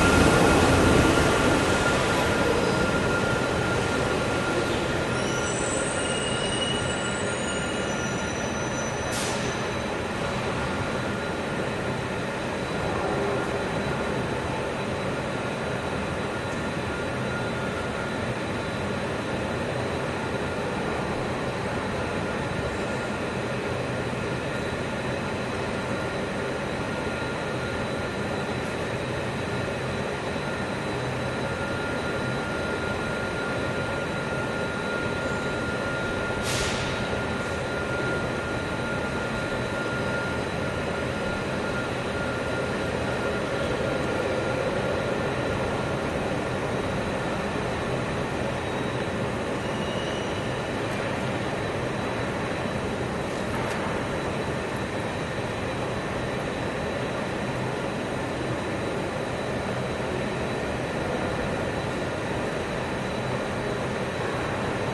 Bus Tunnel - Bus Tunnel #2
Running underneath downtown Seattle is a commuter bus tunnel, allowing Metro to bypass downtown traffic. The 1.3 mile $455 million tunnel is finished entirely in expensive Italian marble, thanks to a cozy arrangement between the contractors and city managers. It presents a reverberant sound portrait of mass transit at work.
Major elements:
* Electric busses coming and going (some switching to diesel on the way out)
* Commuters transferring on and off and between busses
* Elevator (with bell) to street level
* Loose manhole cover that everybody seems to step on